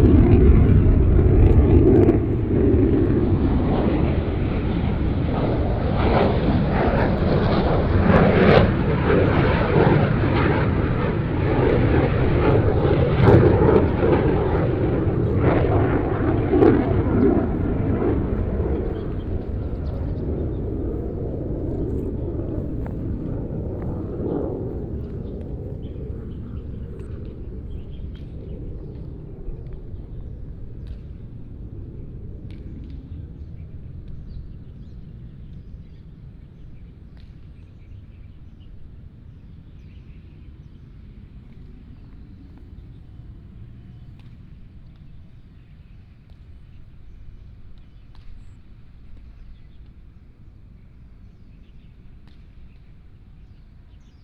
空軍廿二村, 新竹市北區 - Dog and the fighter
Dog sounds, Birds sound, The fighter took off, Playing baseball, Here was the home area of soldiers from China, Binaural recordings, Sony PCM D100+ Soundman OKM II
Hsinchu City, Taiwan, 15 September 2017, 14:46